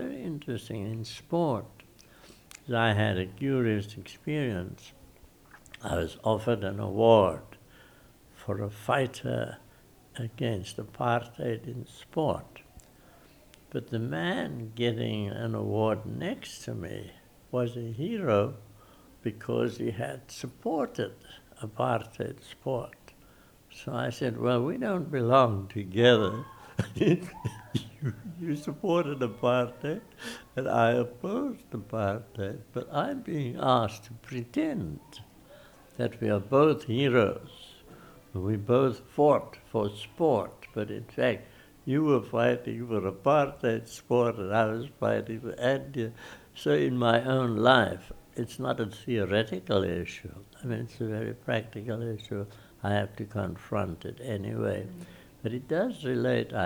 University of Kwa-ZuluNatal, CCS, South Africa - the late Dennis Brutus speaks truth to South African history...
the late Dennis Brutus, poet, activist, freedom fighter talks about the challenges of memory and history in South Africa. the recording was made in Dennis' office at the Centre for Civil Society where Dennis was active as Honorary Professor at the time. The recording was made in the context of the Durban Sings project and is also part of its collection.
listen to the entire interview with Dennis here: